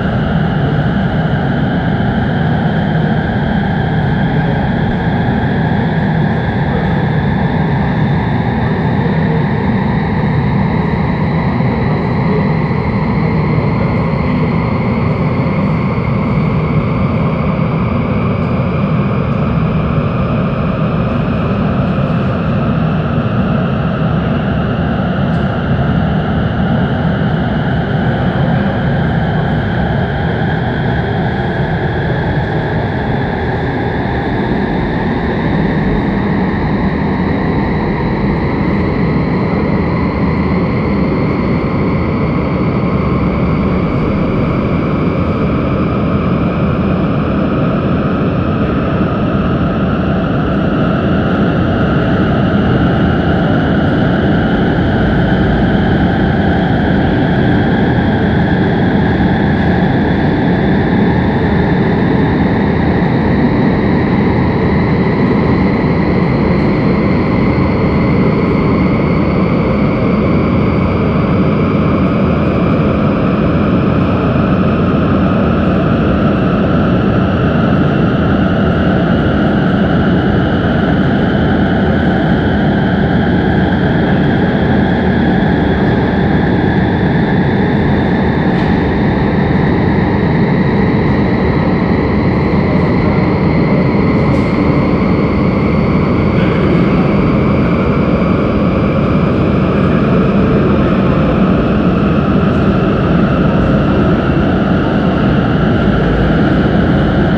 Lippstadt, Deutschland - Lippstadt, old water tower, sound and light installation
Inside the old water tower of Lippstadt. The sound of an installation by Jan Peter Sonntag, that is part of the light promenade Lippstadt. In the background voices of first visitors and the artist.
soundmap d - social ambiences, topographic field recordings and art spaces
Lippstadt, Germany, December 2012